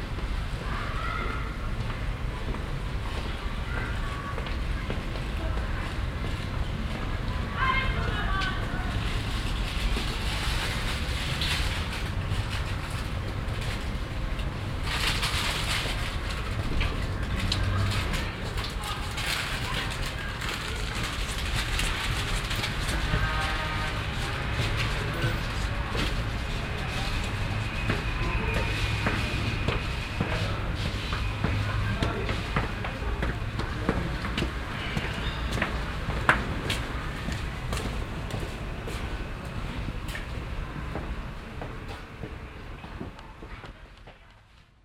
mettmann, orthsgasse, schritte
schritte auf stufen in enger altertümlicher gasse
- soundmap nrw
project: social ambiences/ listen to the people - in & outdoor nearfield recordings